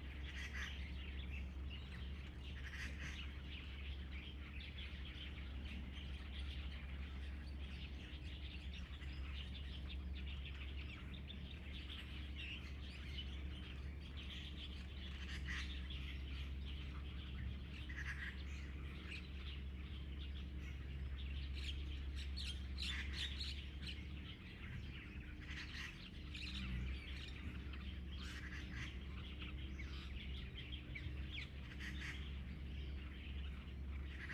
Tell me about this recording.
Birdsong, Traffic Sound, under the Bridge, Train traveling through, Very hot weather, Zoom H2n MS+ XY